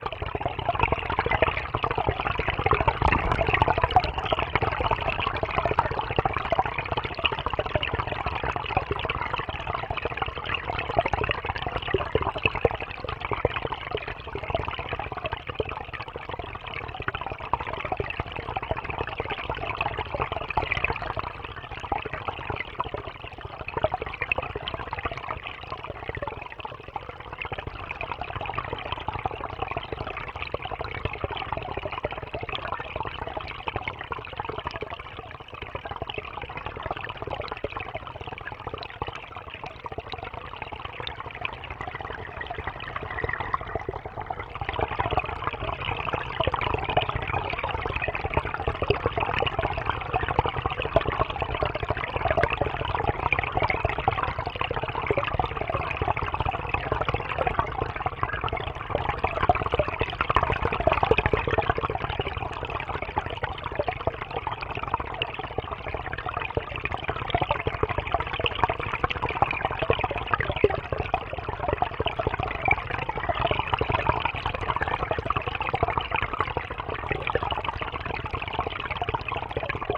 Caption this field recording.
Flowing stream caused by snow melt near Eastman Hall, Recorded with a hydrophone